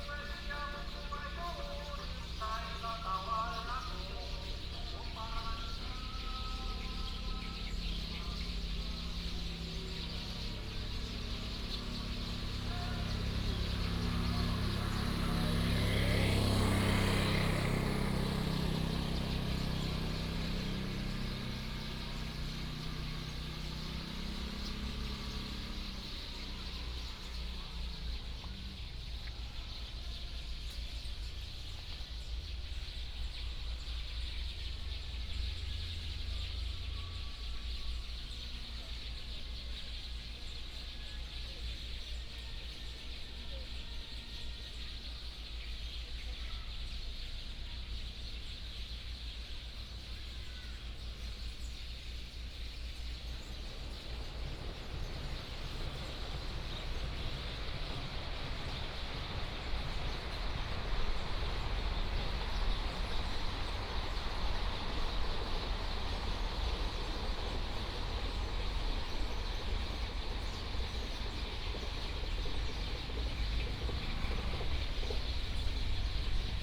Small village .Traffic Sound, Birdsong
5 September 2014, 18:08